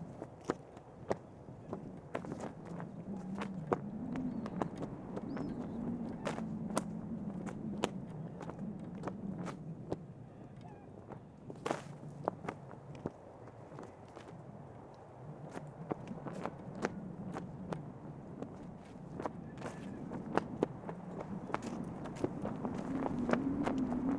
Patmos, Vagia, Griechenland - Fahne im Wind
Patmos, Greece